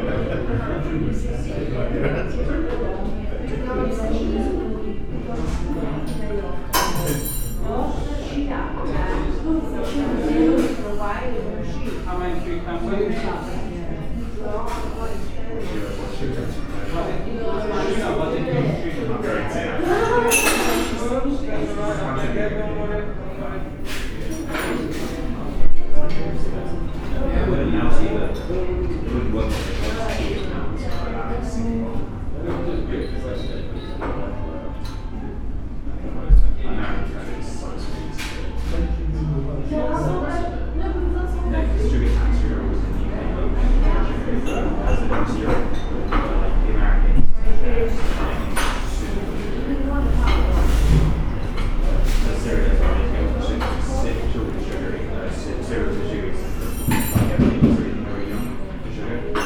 Cafe Voices, Great Malvern, Worcestershire, UK - Cafe
The sounds of a pleasant cafe.
MixPre 3 with 2 x Rode NT5s in a rucksack.